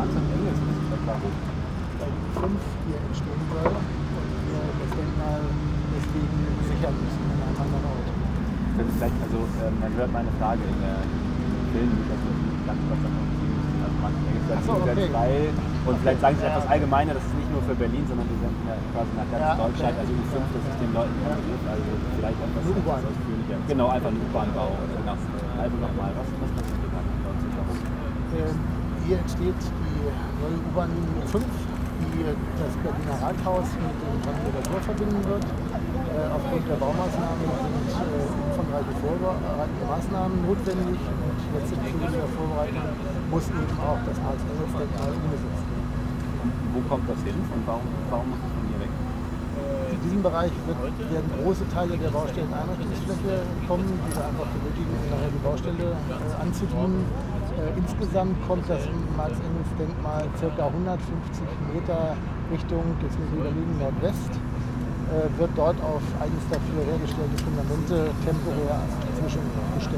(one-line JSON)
{"title": "Berlin, Marx-Engels-Forum - Interview", "date": "2010-09-07 13:25:00", "description": "by chance, ive listened to an interview held with a person in charge", "latitude": "52.52", "longitude": "13.40", "altitude": "38", "timezone": "Europe/Berlin"}